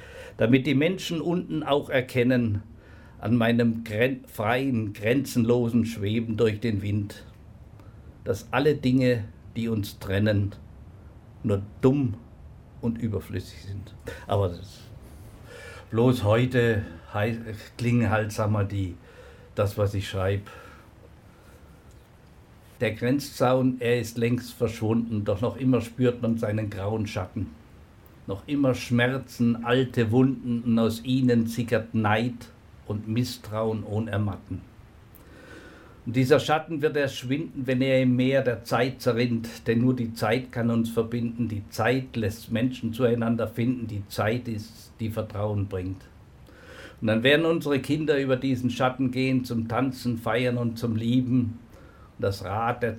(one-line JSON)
{"title": "billmuthausen - im freien", "date": "2009-08-18 16:47:00", "description": "Produktion: Deutschlandradio Kultur/Norddeutscher Rundfunk 2009", "latitude": "50.29", "longitude": "10.79", "altitude": "288", "timezone": "Europe/Berlin"}